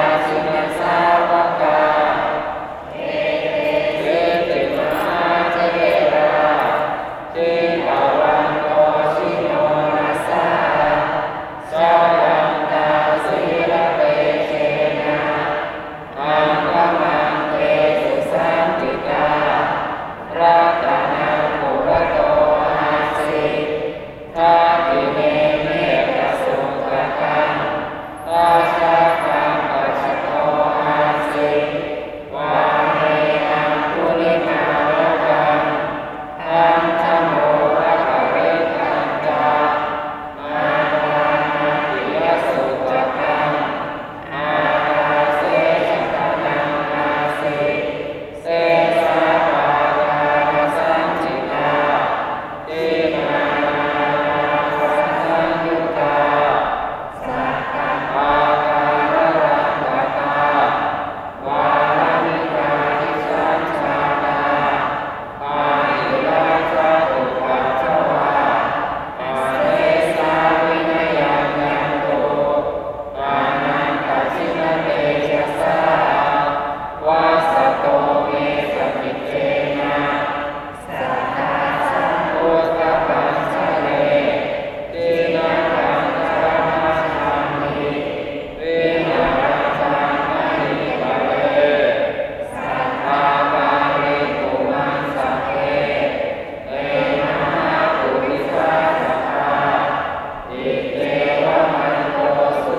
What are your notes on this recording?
Bangkok, a ceremony in the Wat Suthat Temple.